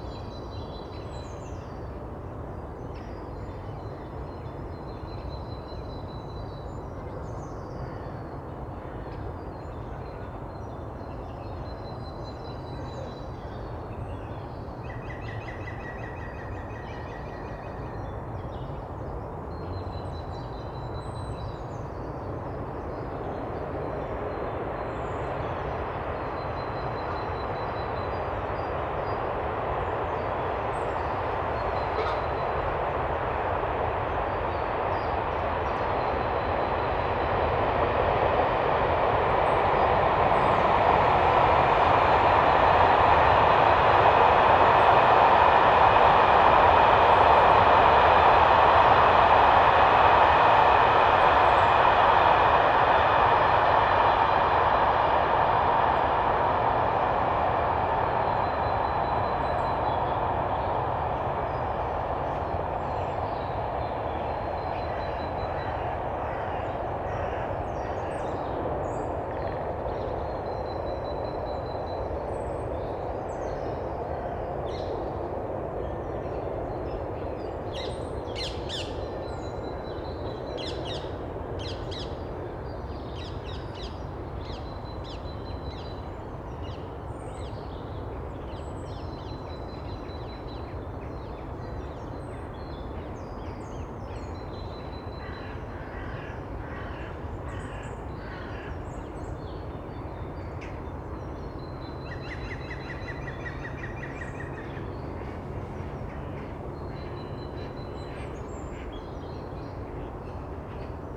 Sijsjesgaarde, Ganshoren, Belgium - Marais de Jette
recording trip with Stijn Demeulenaere and Jan Locus
Lom Uzi's + MixPre3
14 February 2019